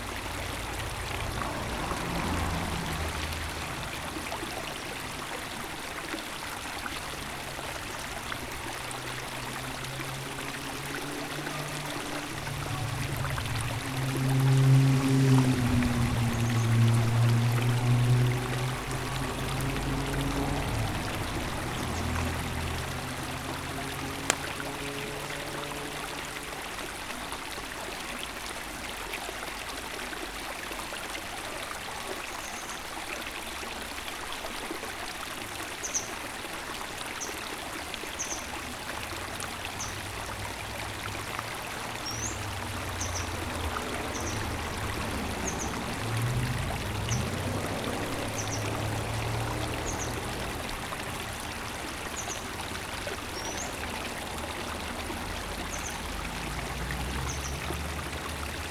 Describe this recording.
the city, the country & me: may 7, 2011